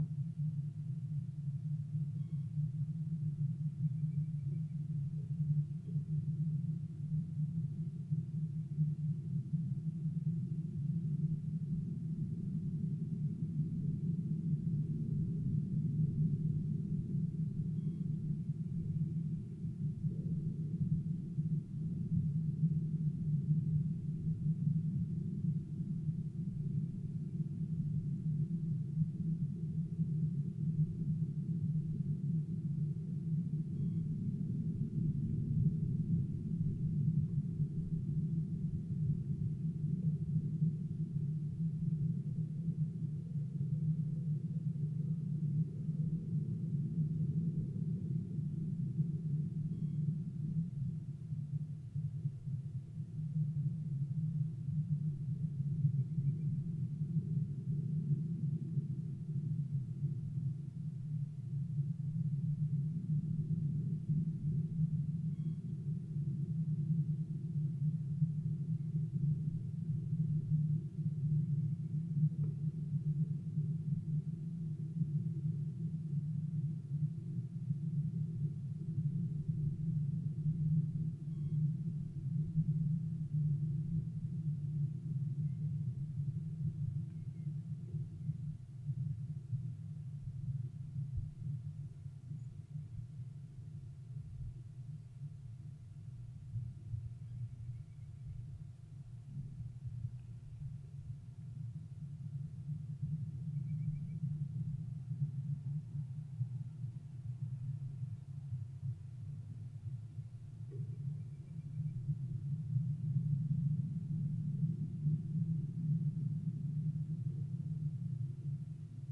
Klondike Park Lake Bridge Labadie Hum, Augusta, Missouri, USA - Labadie Hum
Hydrophone used as contact mic attached to metal bar of bridge that sits on frozen lake surface captures hum of Labadie Energy Center.